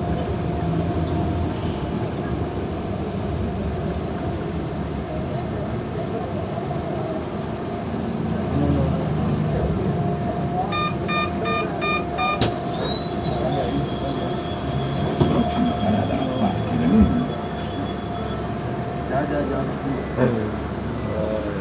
U de M, Medellín, Antioquia, Colombia - Viaje en metroplus desde estación Udem hasta belén
Sonido ambiente en el metro plus entre las estaciones Udem y Belén.
Coordenadas: 6°13'50.9"N+75°36'33.9"W
Sonido tónico: voces hablando, sonido de motor.
Señales sonoras: puertas hidráulicas abriendo, señal de abrir puertas, avisos por altavoces.
Grabado a la altura de 1.60 metros
Tiempo de audio: 3 minutos con 40 segundos.
Grabado por Stiven López, Isabel Mendoza, Juan José González y Manuela Gallego con micrófono de celular estéreo.